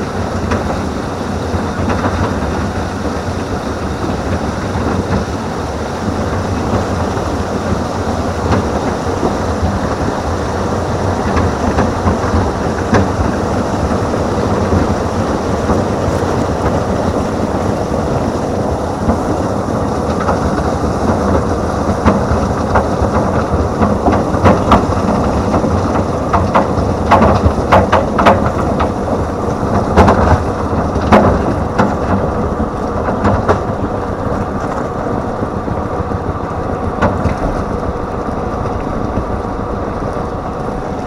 Unnamed Road, Hameln, Germany - BROKEN STONES (On The Assembly Line)
I came as close as possible to the assembly line, which transported broken stones from the lakes. Sounds of stones in movement passing through the filtering machine to its destination I found it attractive so I put the camera some centimeters away from the highest stone.